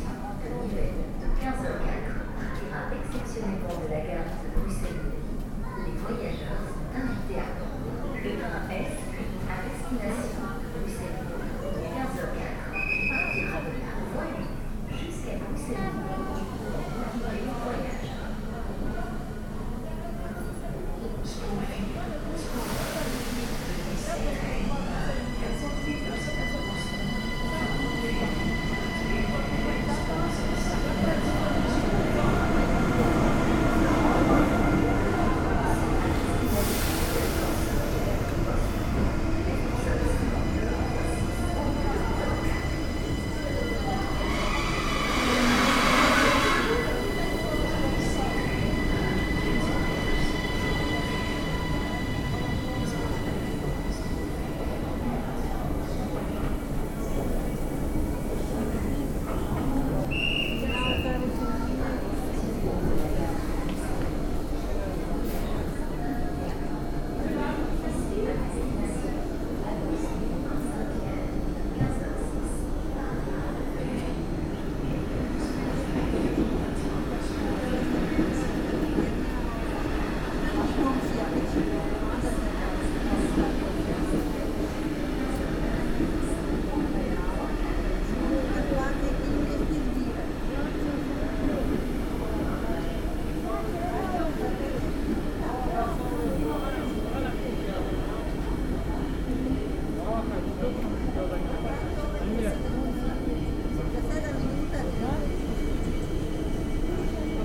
Quartier Nord, Bruxelles, Belgique - Bruxelles Nord
Long ambience of the platforms in the big train station of Brussels North.
Schaarbeek, Belgium, 12 March 2016